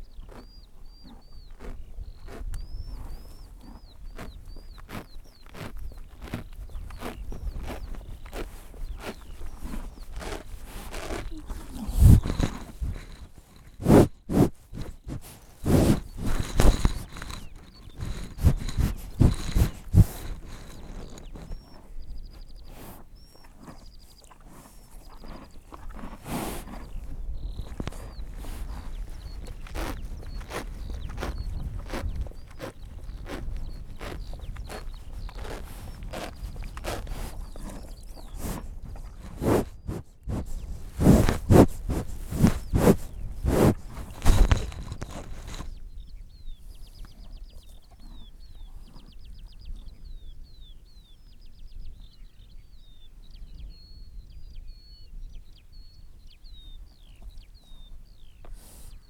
Eating horses, Penrith, UK - Eating horses
The sound of horses eating grass in the Lakes.